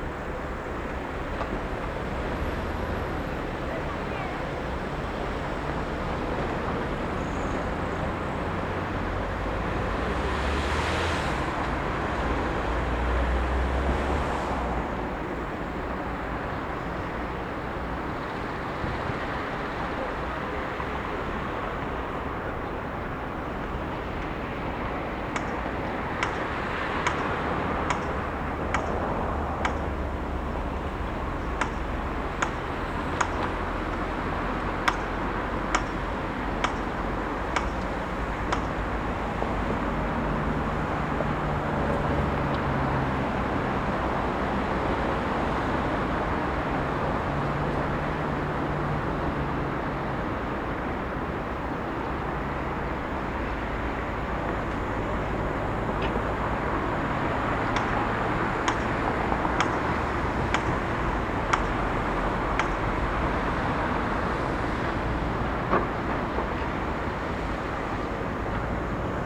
Żołnierska, Olsztyn, Poland - Obserwatorium - Południe
Recorded during audio art workshops "Ucho Miasto" ("Ear City"):
3 June 2014